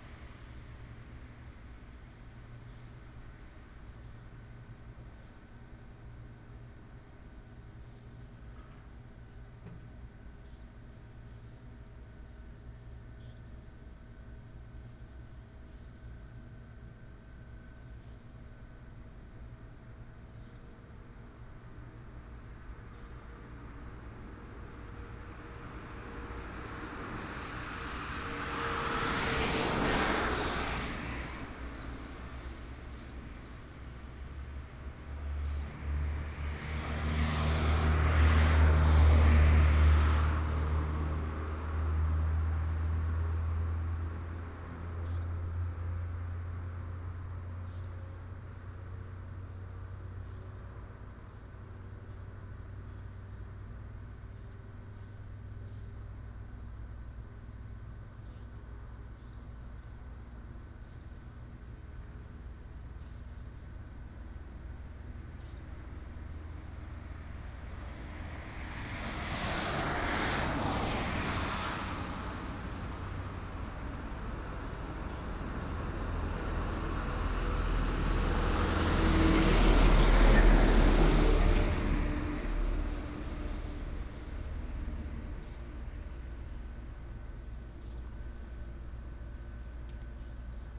佳冬門市, Jiadong Township, Pingtung County - Late night shop
Night outside the convenience store, Late night street, Traffic sound, Bird cry, Truck unloading
Binaural recordings, Sony PCM D100+ Soundman OKM II
Pingtung County, Taiwan